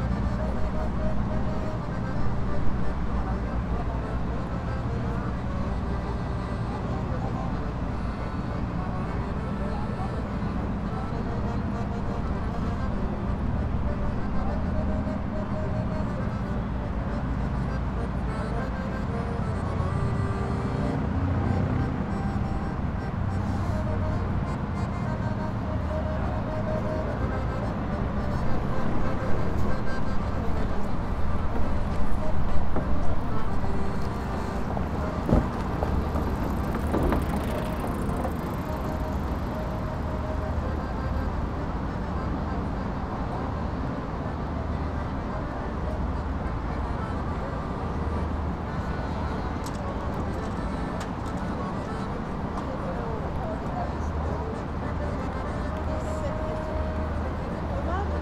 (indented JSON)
{
  "title": "pont des arts, paris",
  "date": "2010-06-22 15:00:00",
  "description": "paris pont des arts, tourists, akkordeon, water",
  "latitude": "48.86",
  "longitude": "2.34",
  "altitude": "29",
  "timezone": "Europe/Paris"
}